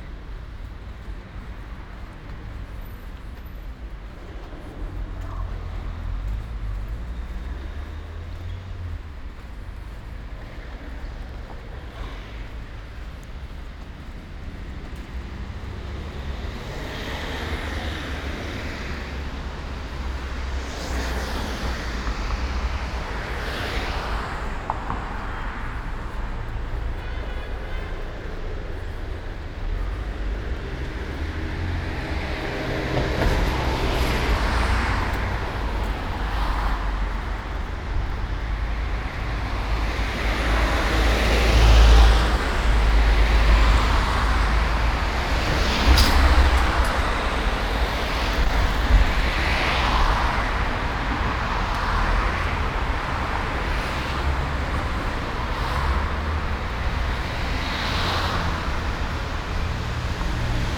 Ascolto il tuo cuore, città. I listen to your heart, city. Several chapters **SCROLL DOWN FOR ALL RECORDINGS** - Round Noon bells on September 8th, Tuesday in the time of COVID19 Soundwalk
"Round Noon bells on September 8th, Tuesday in the time of COVID19" Soundwalk
Chapter CXXX of Ascolto il tuo cuore, città. I listen to your heart, city
Tuesday, September 8st, 2020, San Salvario district Turin, walking to Corso Vittorio Emanuele II and back, five months and twenty-nine days after the first soundwalk (March 10th) during the night of closure by the law of all the public places due to the epidemic of COVID19.
Start at 11:51 a.m. end at 00:17 p.m. duration of recording 25’46”
The entire path is associated with a synchronized GPS track recorded in the (kmz, kml, gpx) files downloadable here:
Piemonte, Italia